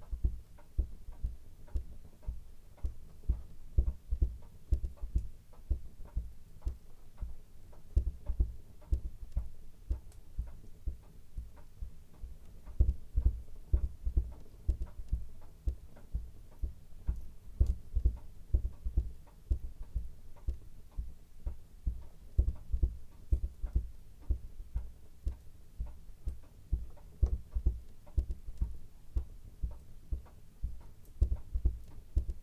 Croft House Museum, Boddam, Dunrossness, Shetland Islands, UK - Rocking an old wooden crib in front of a peat fire
Listening to oral histories from Shetland I was struck by one woman's account of how it had been her job as a young girl to rock her siblings in the crib when they were babies, and how she had made this boring task more interesting by knitting socks at the same time. I was interested in hearing for myself the domestic sound of the rhythms of such a crib, because its rhythm would have been part of the sonic world which this knitter inhabited while she knitted. Staff at the Shetland Museum told me the best place to record the crib would be at the Croft House Museum, as this low building with lack of electricity and open peat fire would most closely resemble the type of dwelling to which the woman speaking about the past was probably referring. In The Croft House Museum I also discovered the sound of a large clock on the mantelshelf; apparently this type of clock was very fashionable in Shetland at one time.
1 August 2013